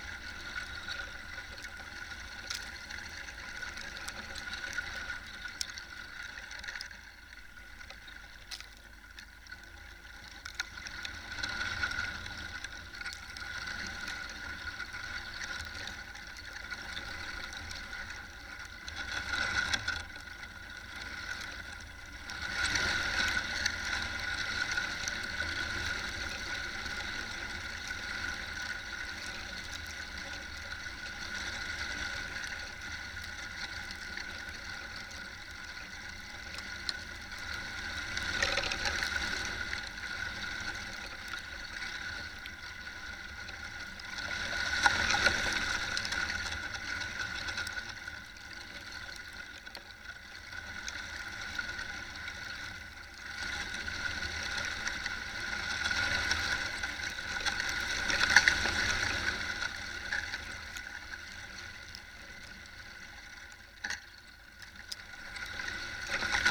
Utena, Lithuania, dried leaves - dried leaves in wind
contact microphone attached to the young oak tree - listen how vibration from dried leaves in wind comes through the branches